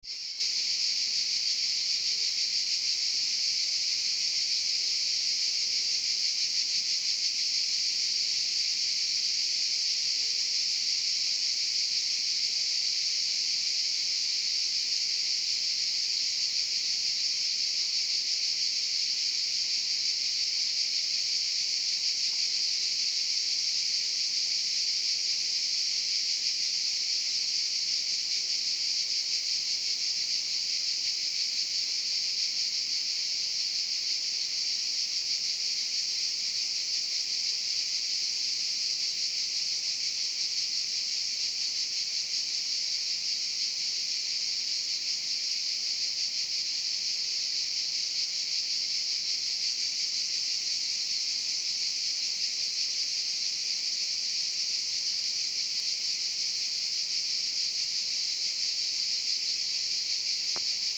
Вирпазар, Montenegro - Swamp near Virpazar
On my way to a camp near Virpazar, Montenegro, I stopped on the dusty road and listened to the sound of the swamp.